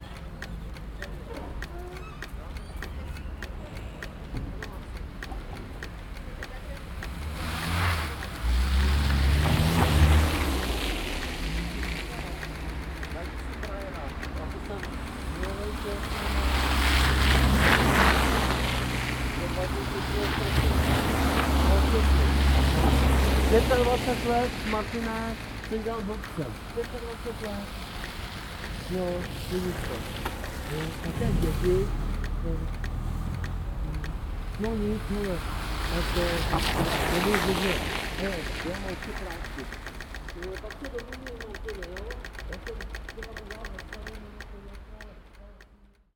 Moravska, Vinohrady, Prag - signals of pedestrian lights, passers-by. [I used Olympus LS-11 with binaural microphones Soundman OKM II AVPOP A3]
Prague-Prague, Czech Republic